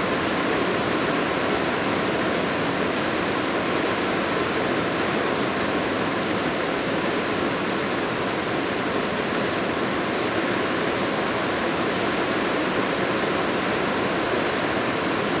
金峰鄉介達國小, Taitung County - heavy rain
heavy rain
Zoom H2n MS+XY